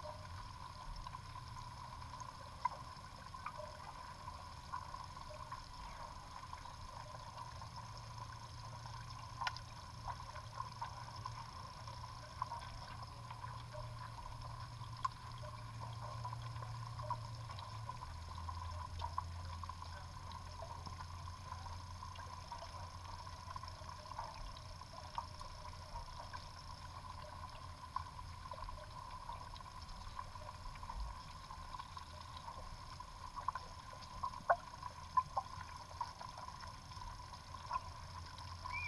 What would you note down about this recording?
Hydrophone in Greverna port, some small motor boat arrives